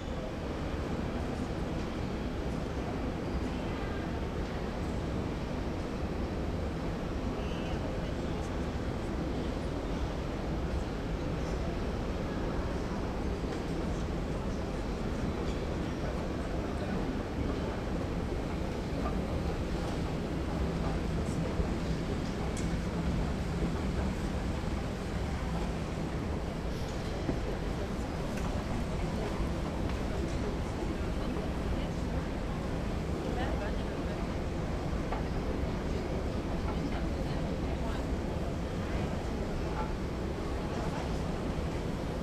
berlin, alexanderplatz: kaufhaus - the city, the country & me: department store
escalator soundwalk
the city, the country & me: may 15, 2010
Berlin, Germany, 15 May 2010, ~15:00